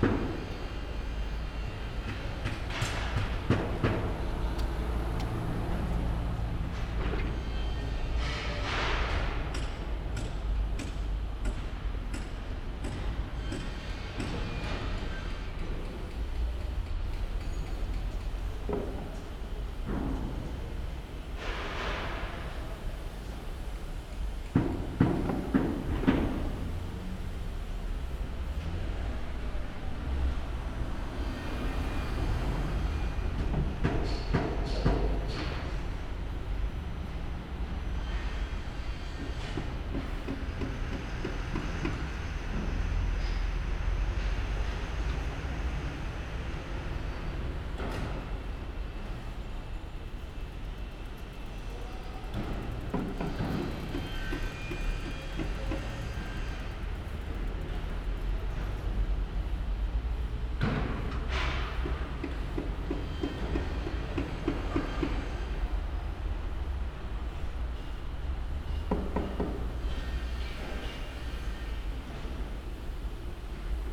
Ulm, Deutschland - Construction Site "Sparkasse"
The Construction Site of the new and really huge "Sparkasse" (Bank) Building is a mess of really nice Working activities